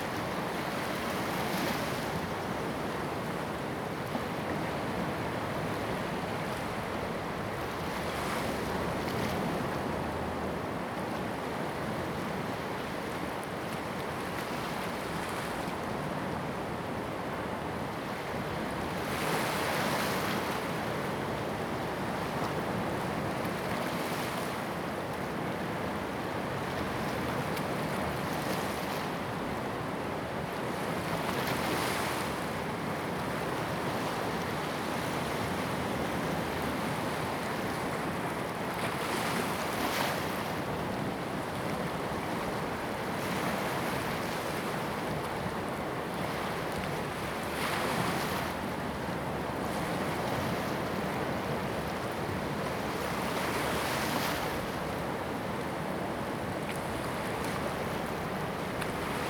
Bajia, Shimen Dist., New Taipei City - at the seaside
at the seaside, Sound of the waves
Zoom H2n MS+XY